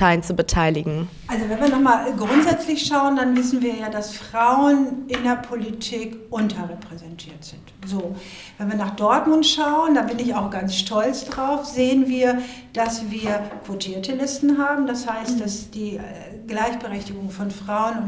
Wahlkreisbüro Anja Butschkau, Dortmund - Dortmund ist bunt und...
we are joining the last minutes of an interview conversation which Andrea and Fatomata conducted for MyTide on a visit to the politician Anja Butschkau. Here Frau Butschkau, a member of the regional parliament of NRW, responds to Fatomata’s question about the participation of the Diaspora community, women in particular, in local politics...
the recording was produced during a three weeks media training for women in a series of events at African Tide during the annual celebration of International Women’s Day.